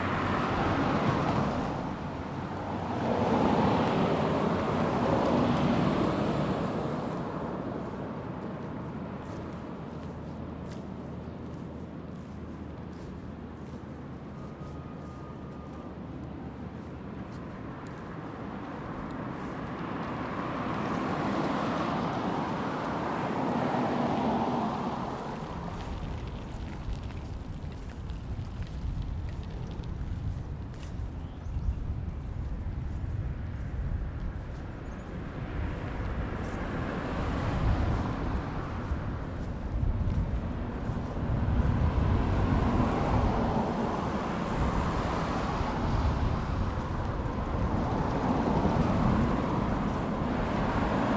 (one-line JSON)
{"title": "Greenlake Park, Seattle Washington", "date": "2010-07-18 12:30:00", "description": "Part three of a soundwalk on July 18th, 2010 for World Listening Day in Greenlake Park in Seattle Washington.", "latitude": "47.67", "longitude": "-122.34", "altitude": "52", "timezone": "America/Los_Angeles"}